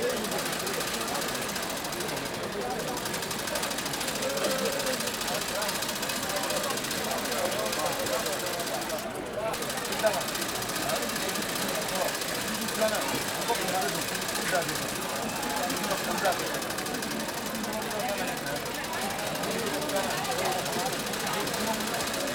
Unnamed Road, Ségou, Mali - outdoor sewing in Segou
outdoor sewing in Segou
11 December